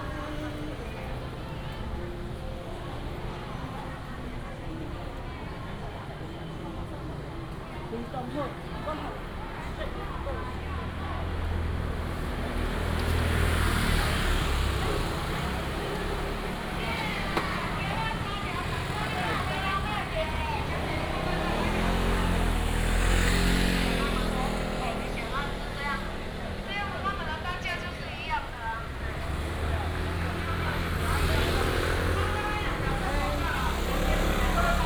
{"title": "Kaifeng Rd., Xinxing Dist., Kaohsiung City - Traditional market", "date": "2018-03-30 11:25:00", "description": "Traditional market, Traffic sound\nBinaural recordings, Sony PCM D100+ Soundman OKM II", "latitude": "22.63", "longitude": "120.31", "altitude": "14", "timezone": "Asia/Taipei"}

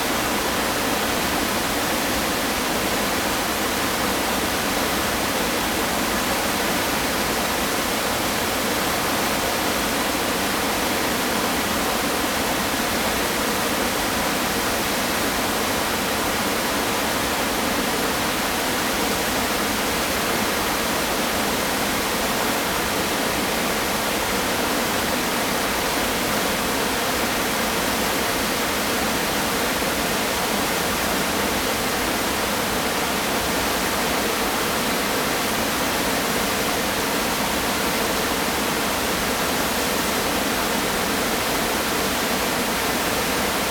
{"title": "猴洞坑瀑布, 礁溪鄉白雲村, Jiaoxi Township - waterfall", "date": "2016-12-07 12:41:00", "description": "stream, waterfall\nZoom H2n MS+ XY", "latitude": "24.84", "longitude": "121.78", "timezone": "GMT+1"}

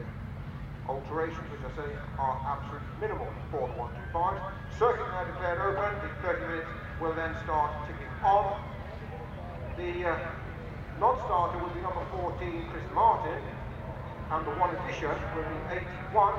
{"title": "Castle Donington, UK - British Motorcycle Grand Prix 2003 ... 125 ...", "date": "2003-07-11 13:10:00", "description": "British Motorcycle Grand Prix 2003 ... 125 qualifying ... one point stereo to minidisk ... time approx ... commentary ...", "latitude": "52.83", "longitude": "-1.37", "altitude": "81", "timezone": "Europe/London"}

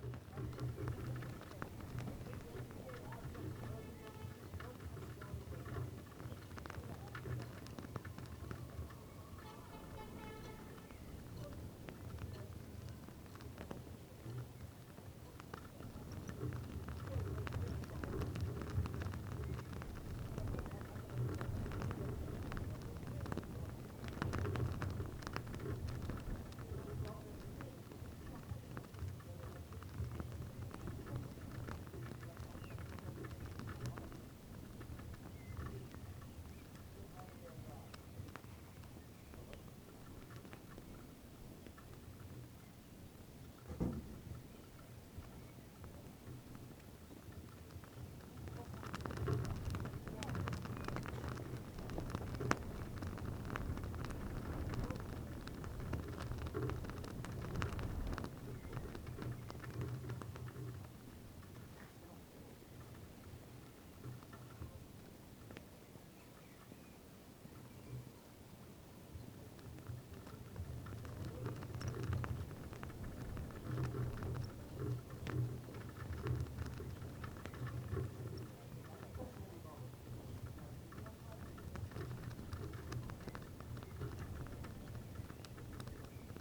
{"title": "woudsend, lynbaen: fahnenmast - the city, the country & me: flagstaff", "date": "2011-06-21 20:15:00", "description": "flag fluttering in the wind\nthe city, the country & me: june 21, 2011", "latitude": "52.95", "longitude": "5.63", "timezone": "Europe/Amsterdam"}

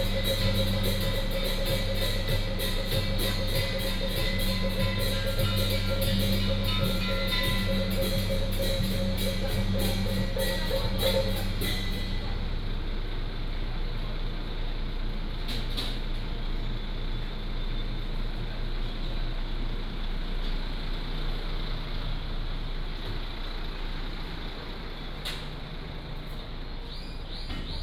{"title": "Sec., Minzu Rd., Tainan City - Pilgrimage group", "date": "2017-02-18 10:27:00", "description": "Pilgrimage group, Traffic sound", "latitude": "23.00", "longitude": "120.20", "altitude": "10", "timezone": "GMT+1"}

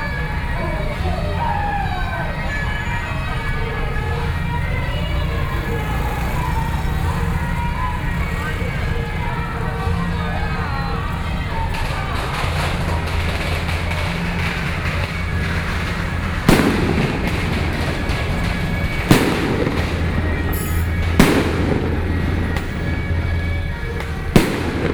{"title": "Ziyou 3rd Rd., Zuoying Dist. - Traditional temple festivals", "date": "2014-06-15 18:16:00", "description": "Traditional temple festivals, Fireworks sound, Traffic Sound\nSony PCM D50+ Soundman OKM II", "latitude": "22.67", "longitude": "120.31", "altitude": "11", "timezone": "Asia/Taipei"}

